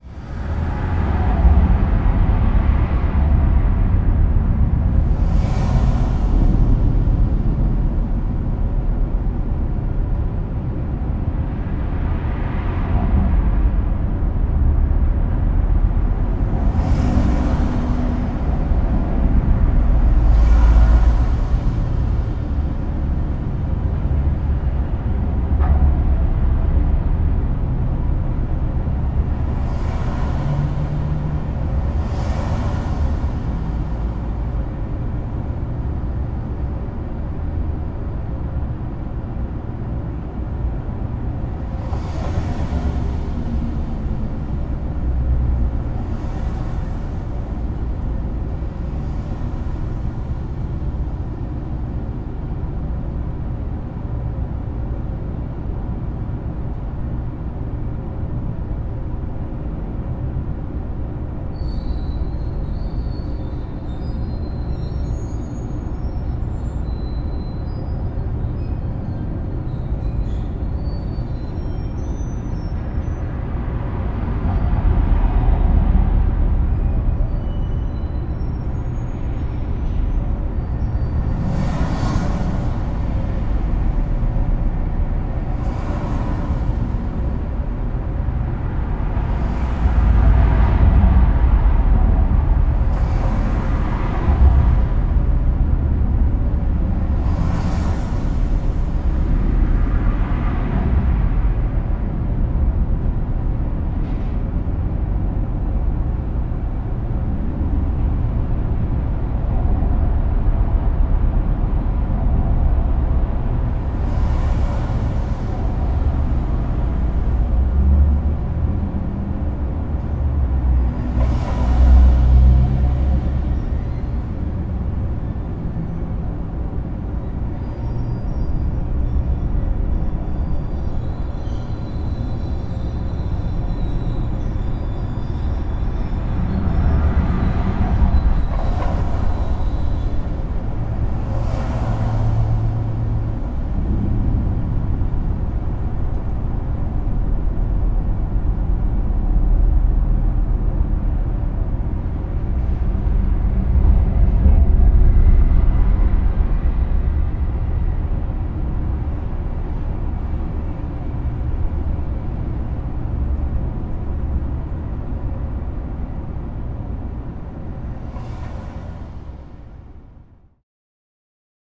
W 125 St/12 Av, New York, NY, USA - Inside a water pipe on Riverside Drive Viaduct 2
Sounds of Riverside Drive Viaduct recorded by placing a zoom h6 inside a water pipe.
10 November 2019, 13:50